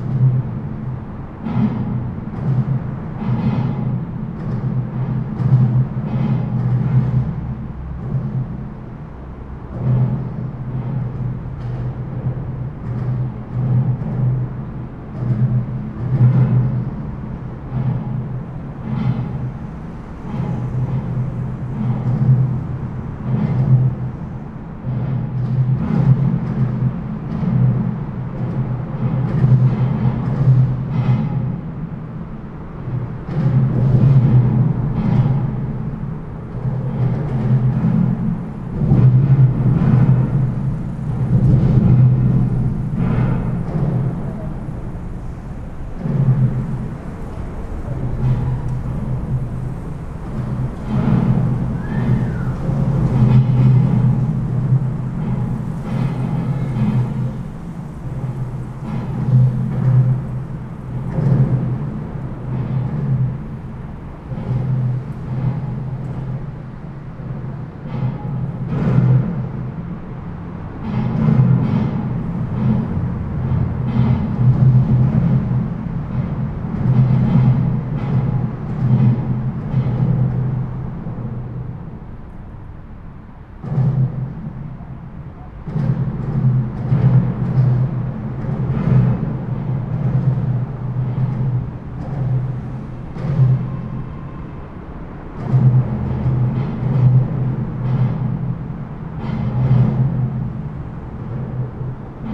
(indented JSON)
{
  "title": "berlin, baumschulenweg: autobahnbrücke - borderline: berlin wall trail, highway bridge",
  "date": "2011-09-24 13:40:00",
  "description": "traffic noise under the bridge\nborderline: september 24, 2011",
  "latitude": "52.46",
  "longitude": "13.46",
  "altitude": "32",
  "timezone": "Europe/Berlin"
}